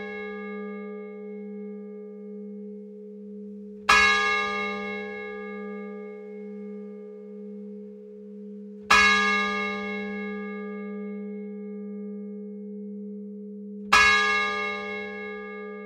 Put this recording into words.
Frazé (Eure et Loir), Église Notre Dame, Une seule cloche - le Glas